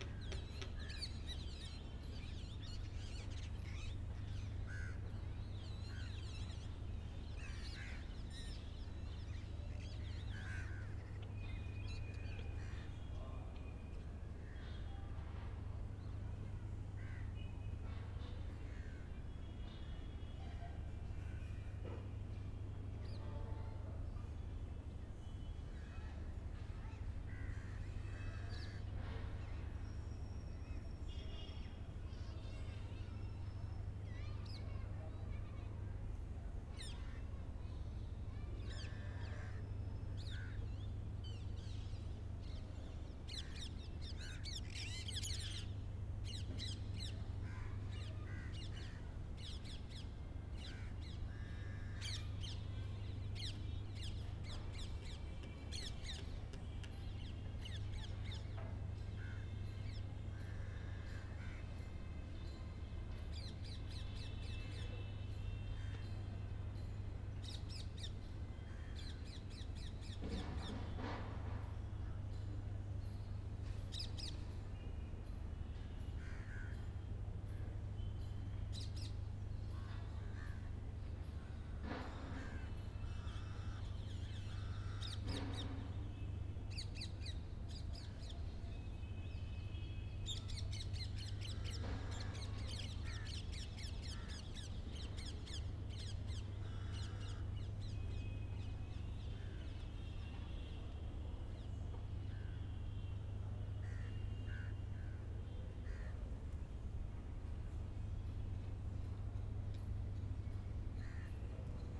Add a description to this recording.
General city ambiance recorded from the flat roof of the very interesting old mosque in Delhi.